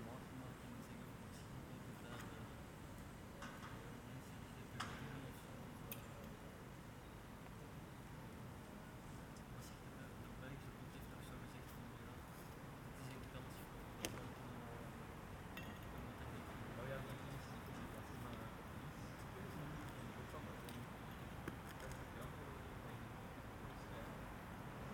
Gare d'Etterbeek, Ixelles, Belgique - Etterbeek station ambience
Trains passing by, a few birds, voice annoucement.
Tech Note : Ambeo Smart Headset binaural → iPhone, listen with headphones.
Brussel-Hoofdstad - Bruxelles-Capitale, Région de Bruxelles-Capitale - Brussels Hoofdstedelijk Gewest, België / Belgique / Belgien